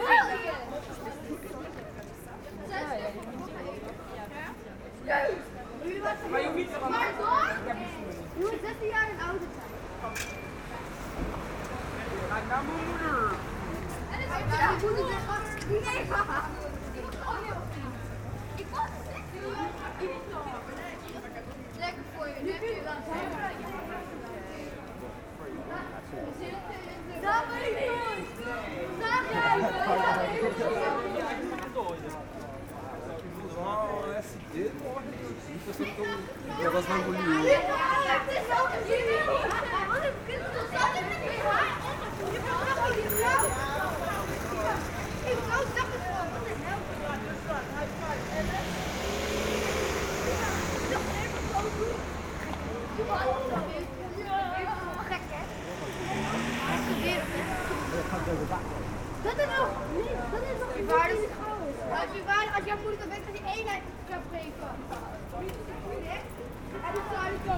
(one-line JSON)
{"title": "Amsterdam, Nederlands - Children talking", "date": "2019-03-28 11:30:00", "description": "Children talking loudly into one of the main touristic avenue of Amsterdam. I follow them walking quickly.", "latitude": "52.37", "longitude": "4.90", "altitude": "4", "timezone": "Europe/Amsterdam"}